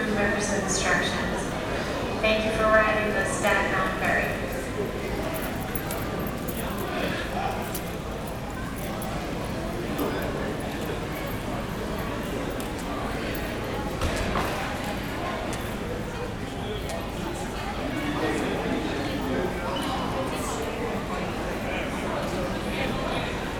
Waiting area of the Staten Island Ferry Terminal.
NY, USA, 14 April